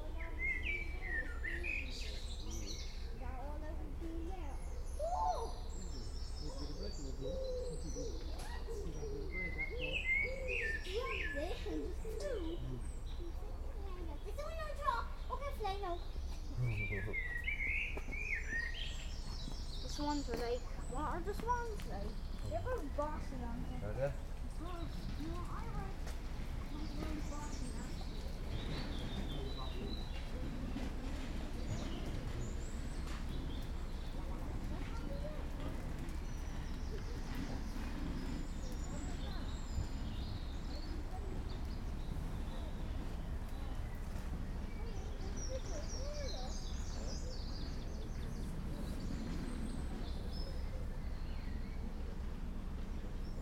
Atlantic Pond, Ballintemple, Cork, Ireland - Evening Ambience: What are the Swans Like? Dunnock Song
Recorded with a Roland R-07, on board mics. On a bench under the trees. Man and boy conversing. Blackbird song at start. The loud song 2/3rds of the way through is a Dunnock.